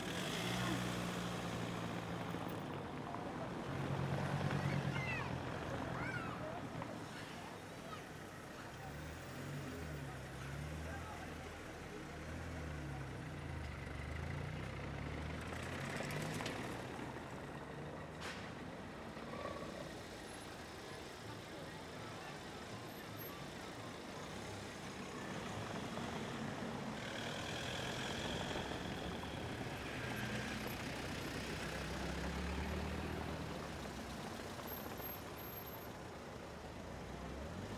Saint-Gilles, Belgium - St Gilles
A lot of traffic passing over cobble-stone paving. I documented the nice sonic textures with Audio Technica BP4029 on wide stereo setting with FOSTEX FR-2LE. Nice.